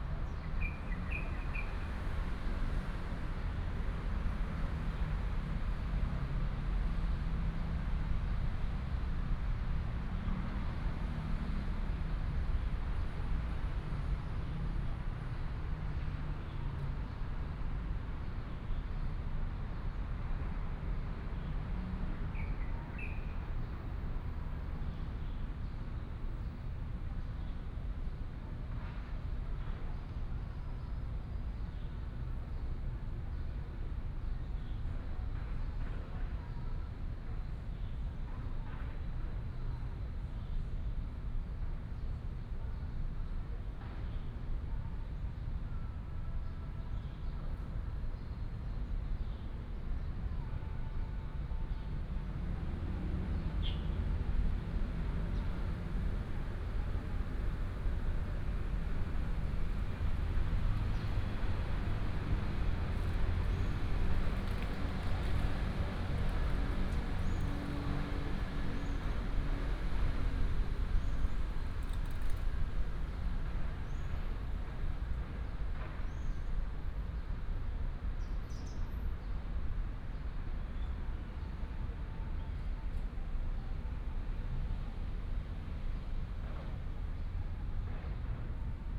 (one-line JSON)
{"title": "成功光影公園, Zhubei City - in the Park", "date": "2017-05-07 13:32:00", "description": "construction sound, Traffic sound, sound of the birds", "latitude": "24.82", "longitude": "121.03", "altitude": "35", "timezone": "Asia/Taipei"}